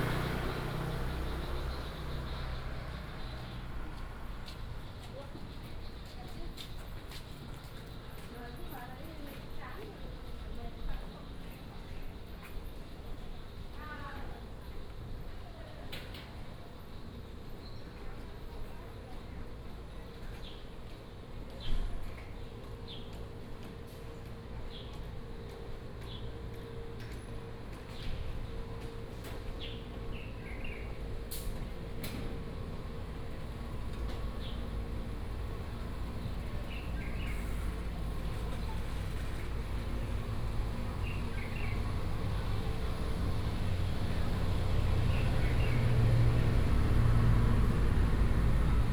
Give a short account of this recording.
Walking in a small alley, Traffic Sound, Bird calls, Hot weather, Air conditioning, sound